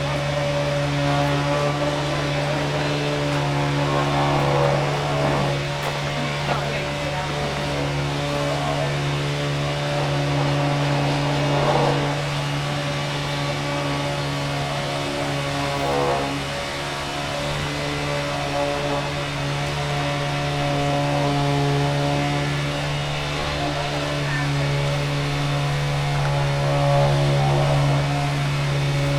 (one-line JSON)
{"title": "Athens, Acropolis - sand leveling", "date": "2015-11-06 11:52:00", "description": "workers doing some work inside of Erechtheion - putting a patch of sand on the building floor and leveling it with a machine. (sony d50)", "latitude": "37.97", "longitude": "23.73", "altitude": "129", "timezone": "Europe/Athens"}